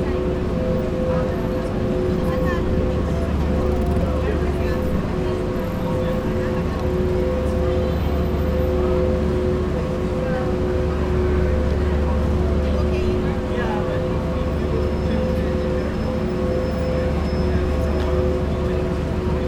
Max Neuhaus sound installation in Times Square.
Humming, sounds of tourists and the subway.
Zoom h6